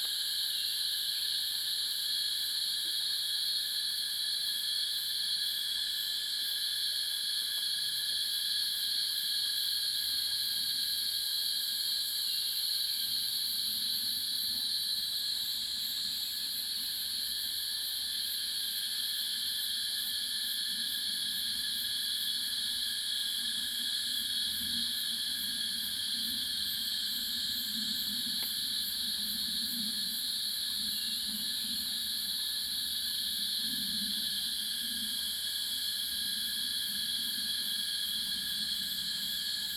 華龍巷, 五城村Nantou County - Cicadas and Bird sounds
Cicadas cry, Bird sounds
Zoom H2n MS+XY